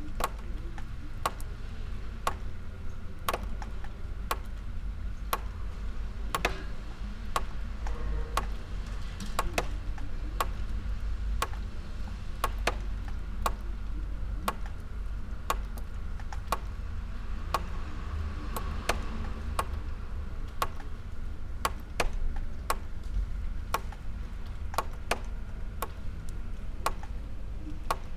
from/behind window, Mladinska, Maribor, Slovenia - alternating drops